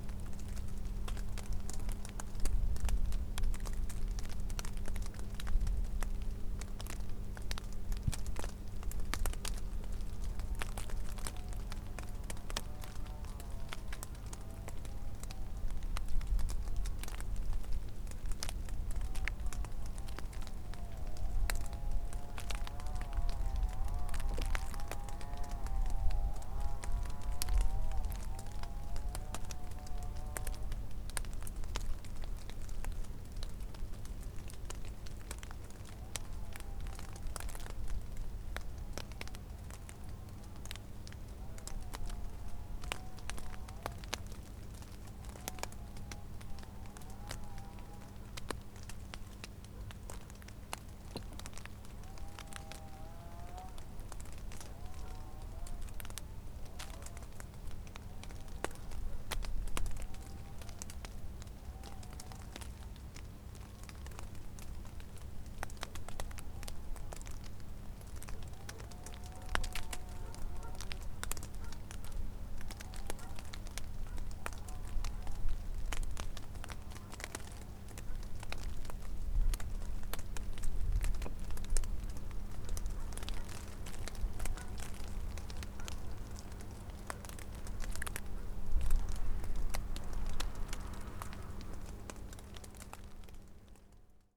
Lithuania, Sirutenai, melting white frost
white frost melts and drips down from the bushes
16 December, 12:45